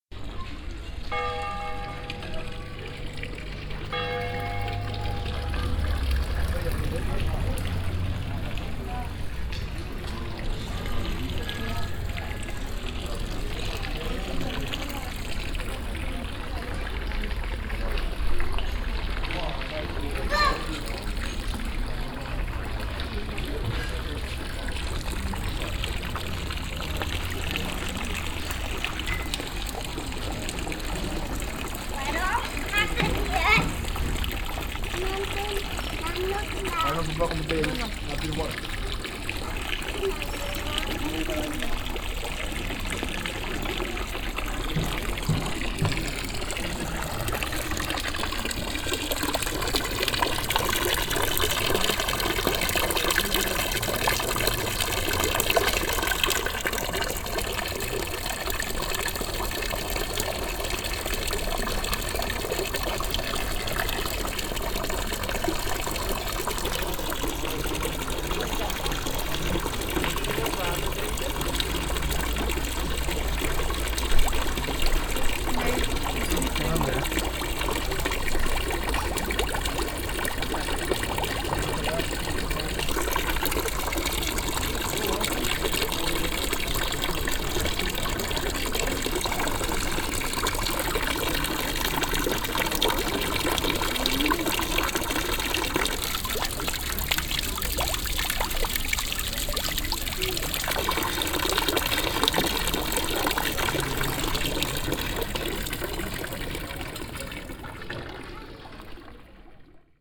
vaison la romaine, church bells and fountain
In the historical part of the old roman village. The 2o clock hour bells and the sound of a fountain on a small public square.
international village scapes - topographic field recordings and social ambiences